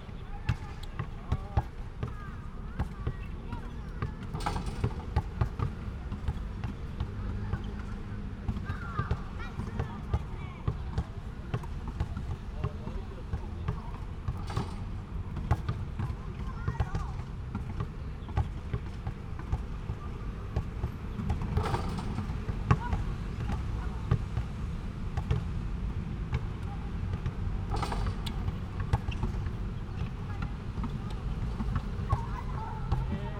Rende Park, Bade Dist. - the ground 2
Place the microphone on the ground of the basketball court, Traffic sound, for World Listening Day 2017